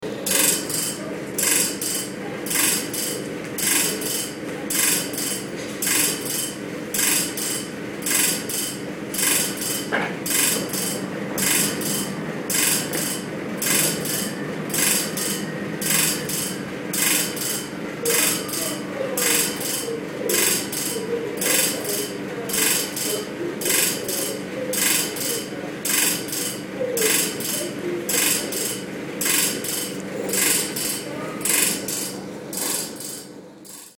Vibrating heater above the door in the waiting room